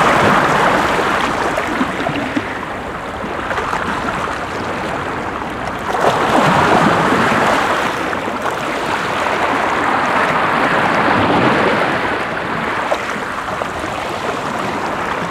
Karmøy, Norwegen - Norway, Akresand, beach, water rock
At Akrasand beach on a mild windy summer day. The sound of water waves rushing to the hear stoney obersprung. In the distance the gurgeling sound of a water maelstrom.
-international sound scapes - topographic field recordings and social ambiences
28 July 2012, 14:30